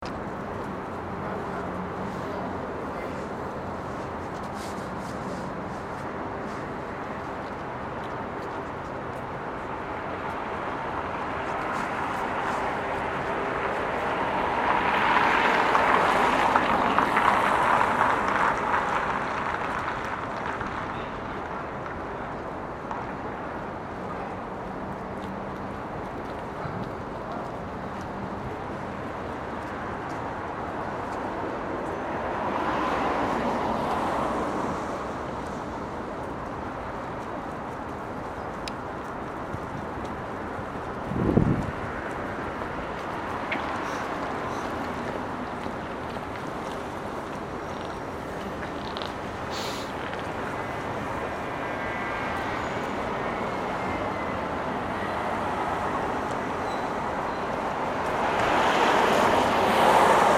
Метро Ленинский проспект, Москва, Россия - Near Leninsky Prospekt metro station
Near Leninsky Prospekt metro station. You can hear cars driving on wet asphalt, people walking, it's snowing. Warm winter. Day.